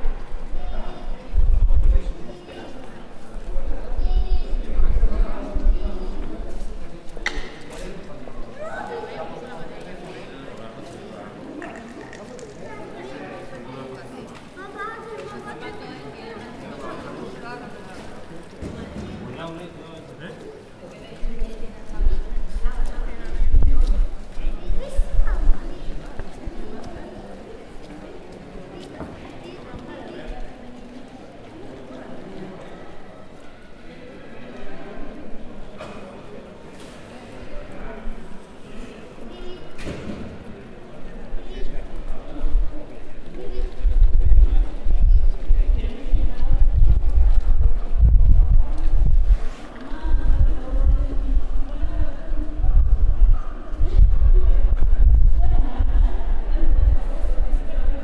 bilbao santiago cathedral
In front of the cathedral of bilbao. Cold and windy sunday.
Bilbao, Biscay, Spain